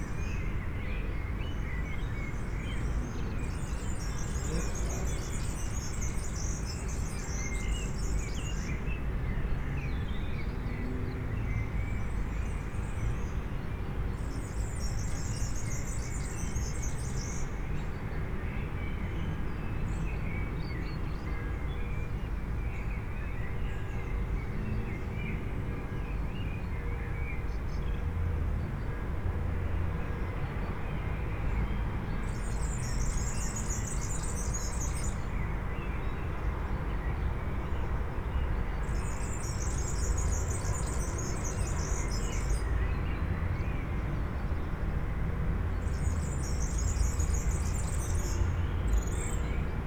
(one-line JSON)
{"title": "Hotel Parc Belle Vue, Luxemburg - open window, evening ambience", "date": "2014-07-05 20:00:00", "description": "at the open window, second floor, Hotel Parc Belle Vue, Luxembourg. Birds, distant city sounds, some bells can be heard, and a constant traffic hum.\n(Olympus LS5, Primo EM172)", "latitude": "49.61", "longitude": "6.12", "altitude": "289", "timezone": "Europe/Luxembourg"}